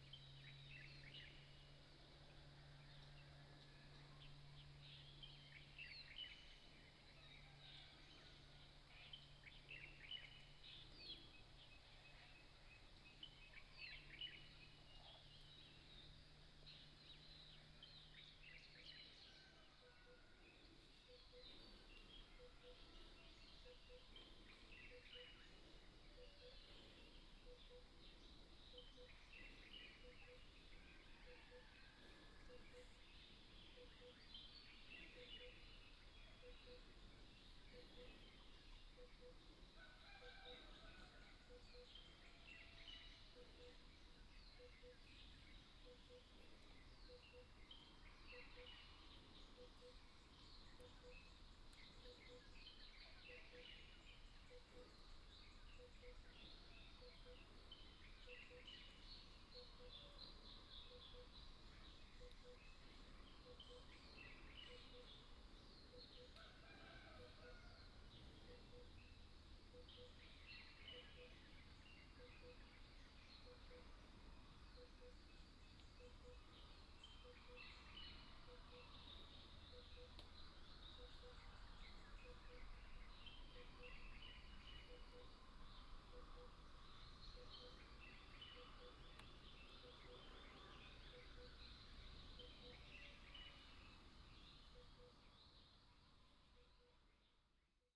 Bird calls, Morning in the mountains, Chicken sounds
Nantou County, Taiwan